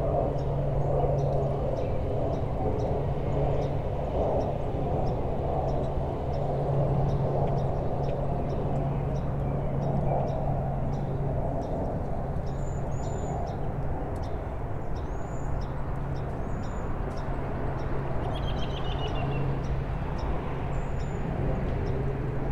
A picnic table in Pendergrast Park. The soundscape here is a mix of heavy traffic sounds and bird calls. Other sounds can be heard throughout, such as the wind rustling dead leaves on a tree behind the recorder to the right. The traffic here is more prominent than it is in the woods.
[Tascam Dr-100 Mkiii & Primo EM-272 omni mics]
Georgia, United States, 23 January